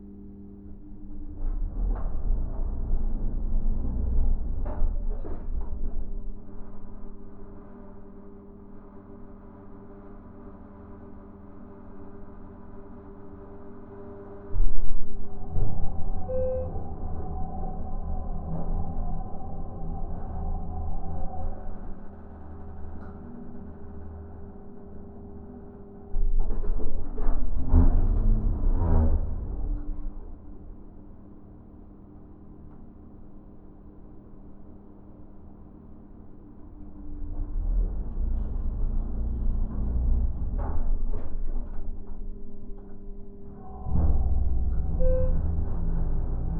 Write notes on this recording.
Recording taken by TASCAM from inside of the elevator as it was being used during high traffic.